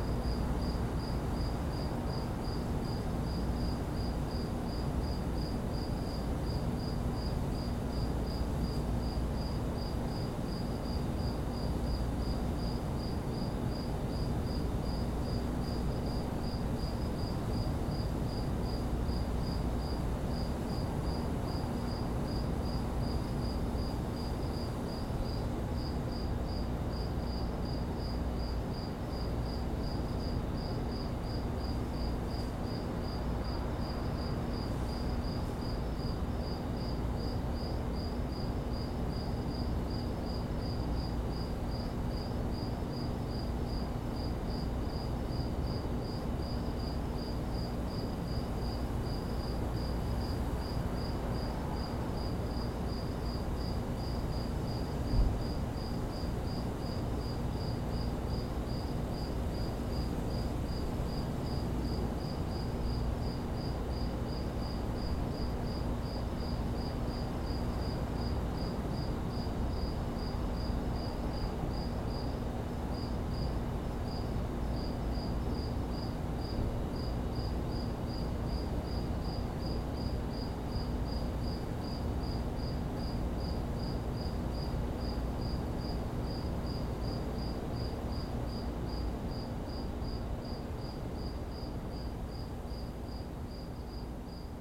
Woodbine Ave, East York, ON, Canada - Crickets, late evening.
Common field crickets, with a brief intervention from a passing aeroplane.
29 August 2022